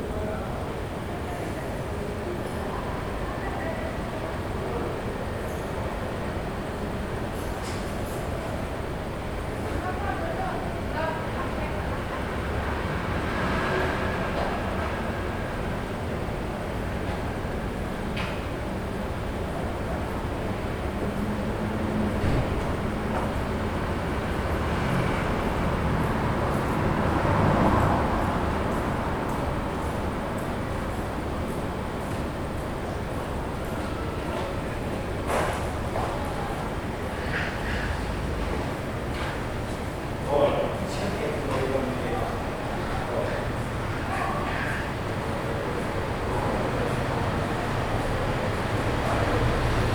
Put recorder on the window sill at 8.30 in the morning. Everyday Bologna side street sounds.